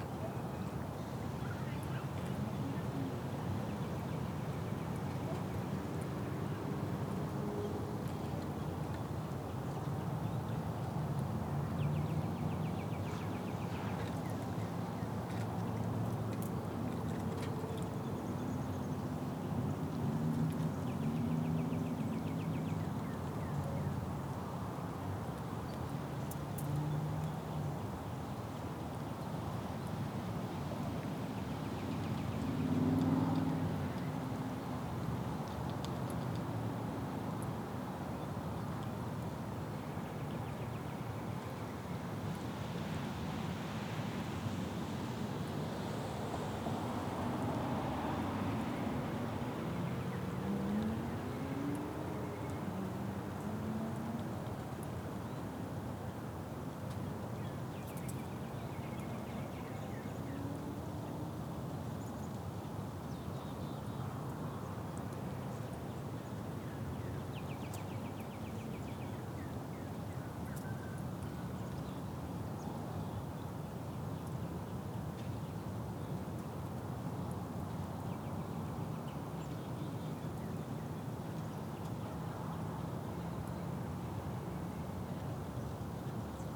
Matoska Park - Matoska Park Part 2
The sound of a warm March day at Matoska Park in White Bear Lake, MN
15 March 2022, ~14:00